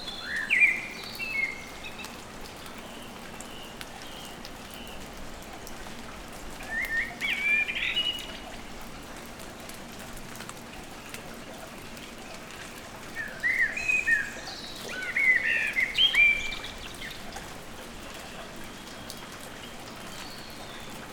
Dartington, Devon, UK - soundcamp2015dartington blackbird at hall in dark